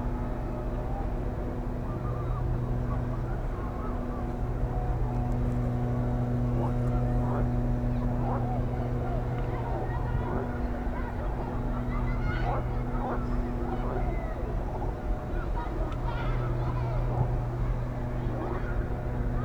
Poznan, Strzeszyn Grecki neighborhood, Homera Street - frogs and soccer match
forgs in artificial pond near a school. kids having a break between classes. some construction nearby. (sony d50)